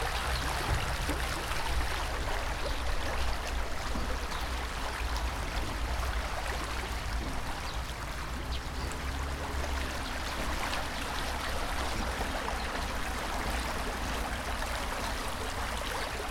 Strzelecka, Gorzów Wielkopolski, Polska - Park of roses.
Kłodawka river in the so-called park of roses.